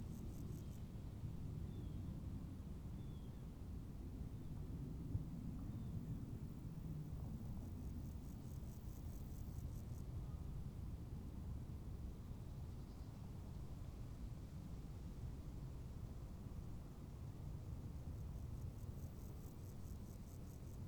berlin, kölner damm: brachland - borderline: fallow land
crickets in the formely "cord of death" of the berlin wall
borderline: august 3, 2011